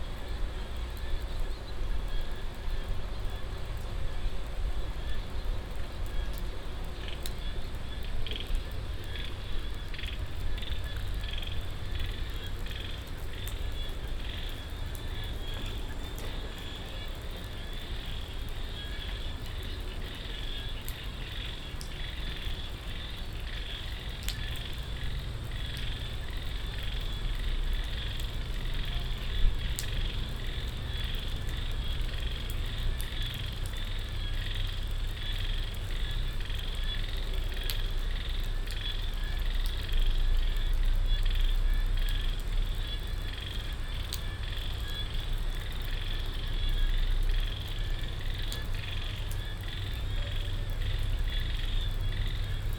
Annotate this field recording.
Marvelous clacking frogs at the pond in front of Puh Annas amazing guesthouse, so quiet and beautiful.